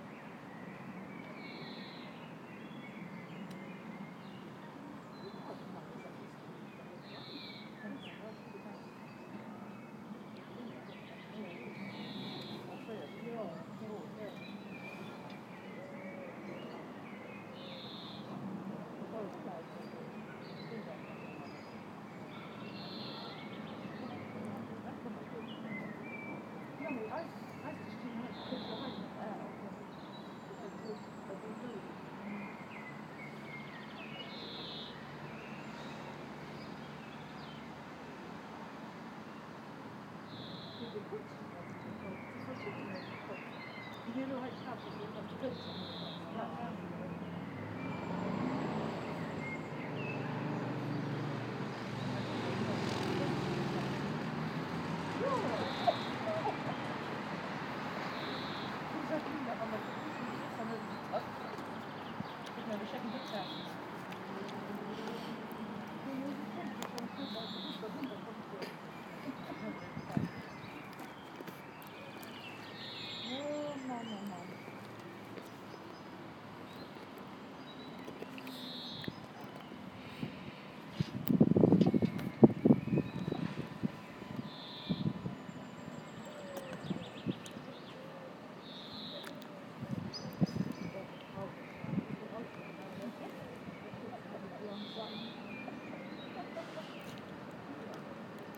Wait/Listen #66 (04.05.2014/17:11/Avenue Emile Reuter/Luxembourg)